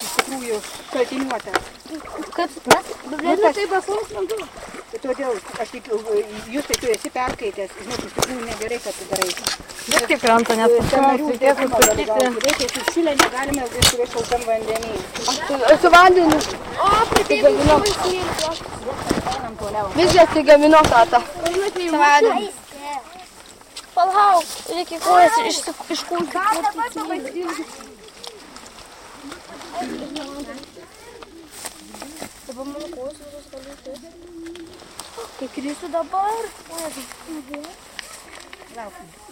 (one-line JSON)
{"title": "cool wade, countryside, Geguzenai, Kaunas district, Lithuania", "date": "2011-06-28 11:13:00", "description": "kids, walk, crossing a brook, Lithuania, Liučiūnai, vaikai, žygis, upelis", "latitude": "55.14", "longitude": "23.54", "altitude": "65", "timezone": "Europe/Vilnius"}